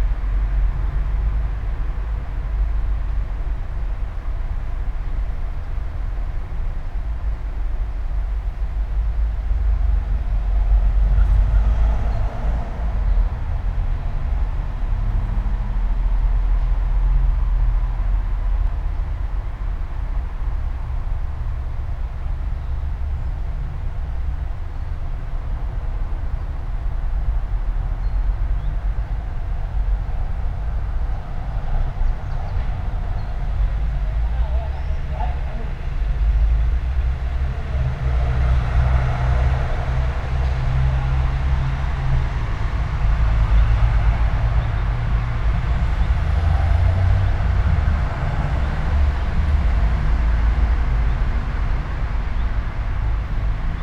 all the mornings of the ... - jul 24 2013 wednesday 07:08
Maribor, Slovenia, 24 July 2013